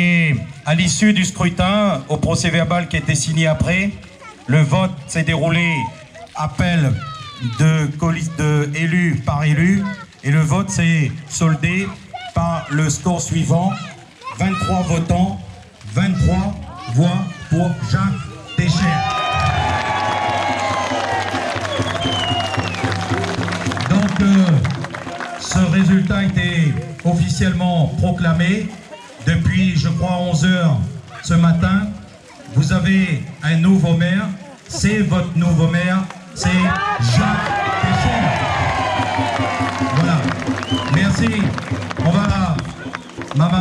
Rue du Pere Boiteau, Réunion - 20200705 1441-1510 remise de l-ercharpe du maire de CILAOS
20200705_1441-1510_remise_de_l-ercharpe_du_maire_de_CILAOS
La Réunion, France, 5 July